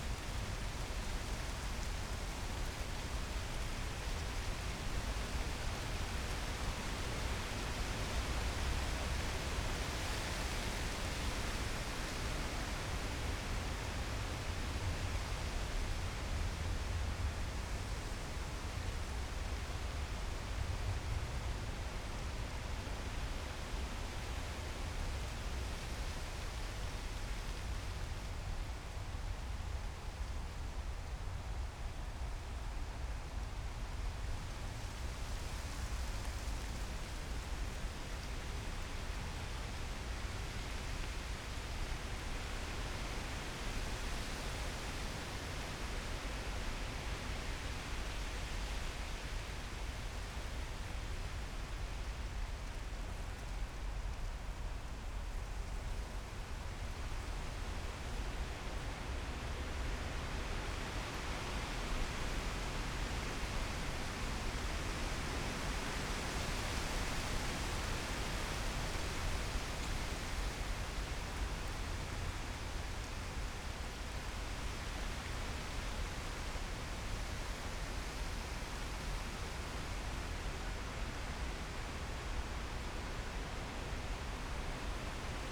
Tempelhofer Feld, Berlin, Deutschland - summer afternoon, wind
fresh breeze in poplar trees, bright summer Monday afternoon.
(Sony PCM D50, Primo EM172)
Berlin, Germany